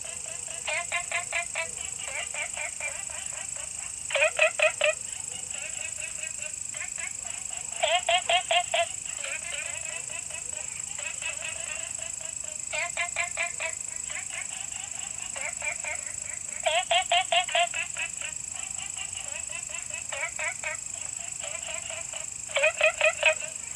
青蛙阿婆家, Taomi Ln., Puli Township - Frog and Insect
In the bush, Frog calls, Insect sounds
Zoom H2n MS+XY